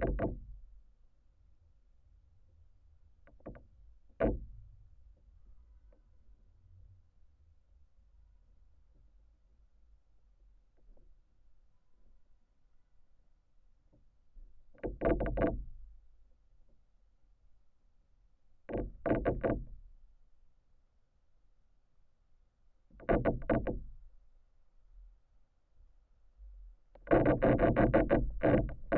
Contact microphones on "moaning" tree
Anykščių rajono savivaldybė, Utenos apskritis, Lietuva, 1 November, 14:50